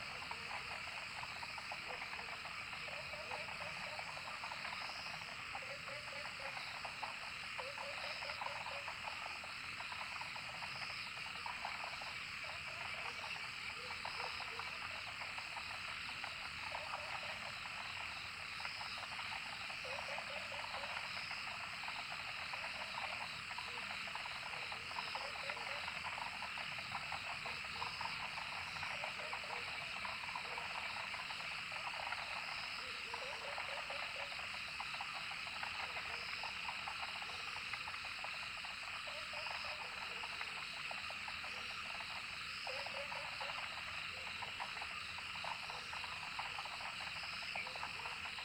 Hualong Ln., Yuchi Township, Nantou County - Frogs and Insects sounds
Frogs chirping, Sound of insects, Dogs barking
Zoom H2n MS+XY